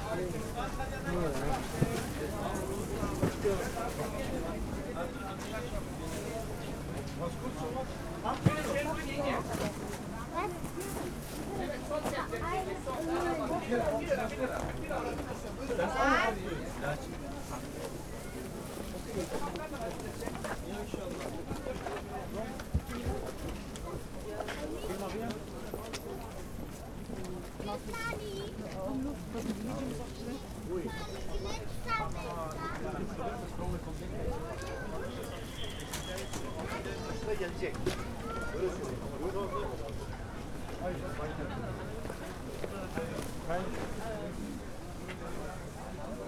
berlin, maybachufer: wochenmarkt - the city, the country & me: market day
windy spring day, a walk around the market
the city, the country & me: april 12, 2011